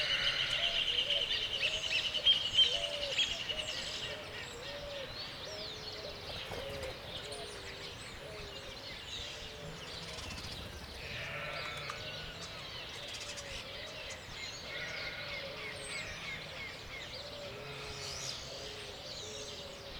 Coronel Segui, Provincia de Buenos Aires, Argentina - Morning
Morning, birds, wind, lambs